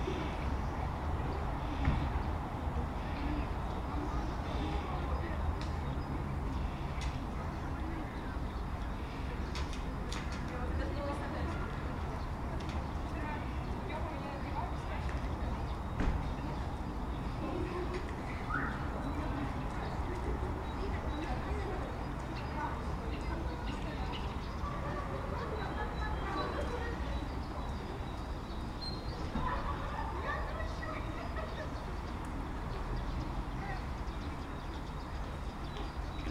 The palace of students, Dnipro, Ukraine - The palace of students - Outdoors [Dnipro]